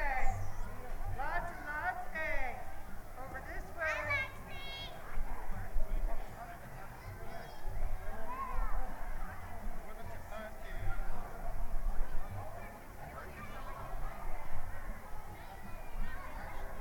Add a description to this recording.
After a moment's wait, about 60 children and their parents hunt for plastic eggs on the Saturday before Easter Sunday. Several inches of snow remain on the ground, after a big snowstorm a few days earlier. Music and a costumed Easter Bunny are part of the festivities. Stereo mic (Audio-Technica, AT-822), recorded via Sony MD (MZ-NF810, pre-amp) and Tascam DR-60DmkII.